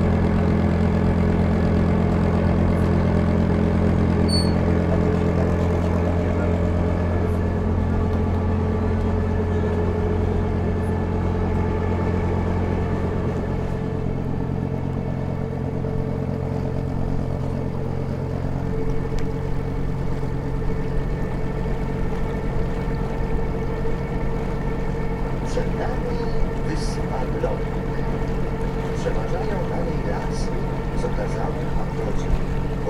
Jezioro Wdzydze - Rejs 2
Dźwięk nagrany podczas Rejsu w ramach projektu : "Dźwiękohistorie. Badania nad pamięcią dźwiękową Kaszubów".
Zabrody, Poland, 13 June